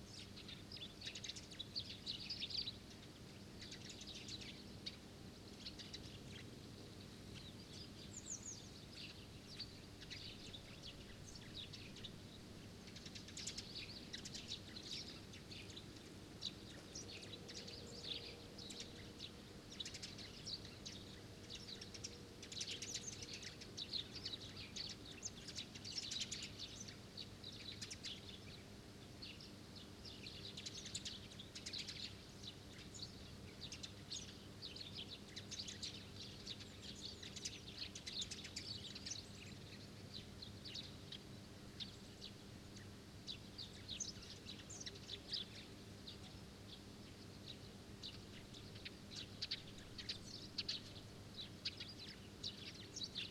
Lithuania, Vyzuonos, soundscape
village's soundscape and humming electrical substation
15 October 2012, 16:30